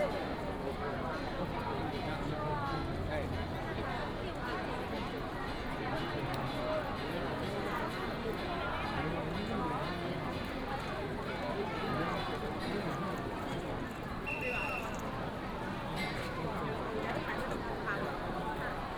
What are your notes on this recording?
Matsu Pilgrimage Procession, Crowded crowd, Fireworks and firecrackers sound